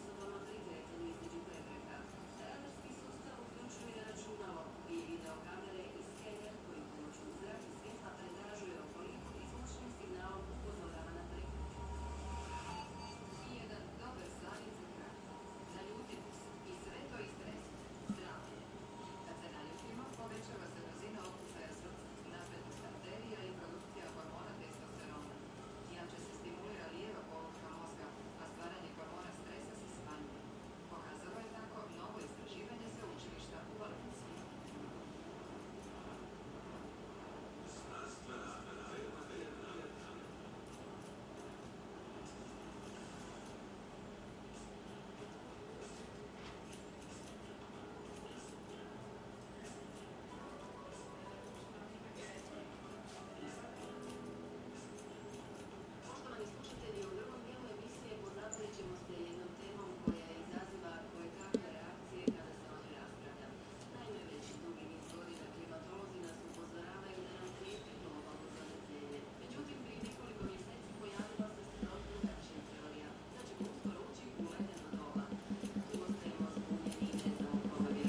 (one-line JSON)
{"title": "barber shop, Petra Preradovica", "date": "2010-06-11 15:44:00", "description": "barber shop, recorded during EBU sound workshop", "latitude": "45.81", "longitude": "15.97", "altitude": "130", "timezone": "Europe/Zagreb"}